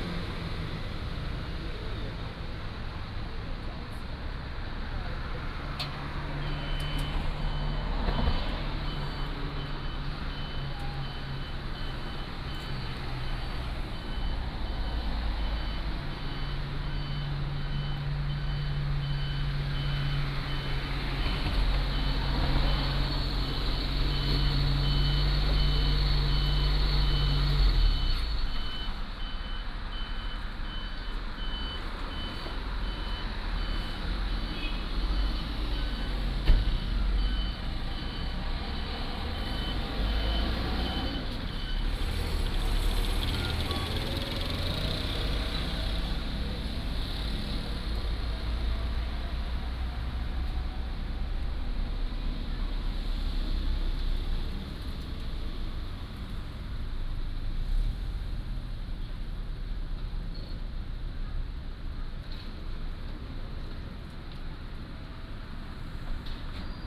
National Museum of Prehistory, Taitung City - Fountain
Fountain, The weather is very hot
Zoom H2n MS +XY